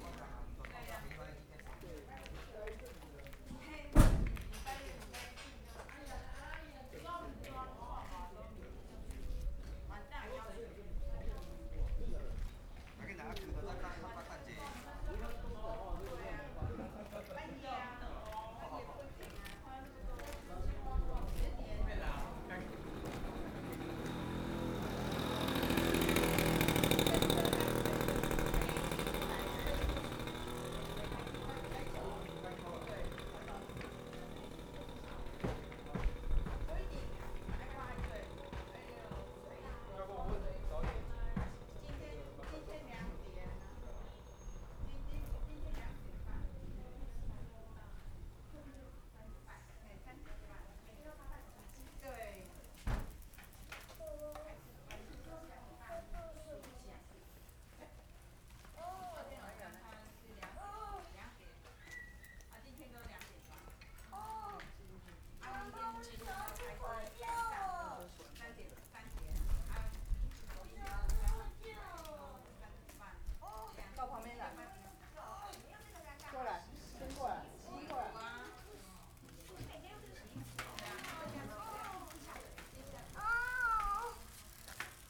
On the streets of hamlet, Dialogue between people who live in a small village, Traffic Sound, Zoom H6
Changhua County, Taiwan, January 2014